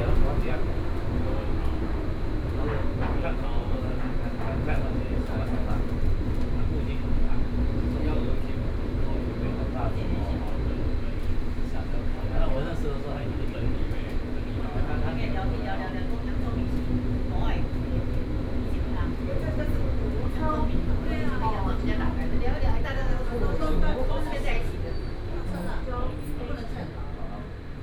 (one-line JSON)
{"title": "Neihu District, Taipei - Neihu Line (Taipei Metro)", "date": "2013-10-31 16:58:00", "description": "from Xihu Station to Huzhou Station, Binaural recordings, Sony PCM D50 + Soundman OKM II", "latitude": "25.08", "longitude": "121.59", "altitude": "13", "timezone": "Asia/Taipei"}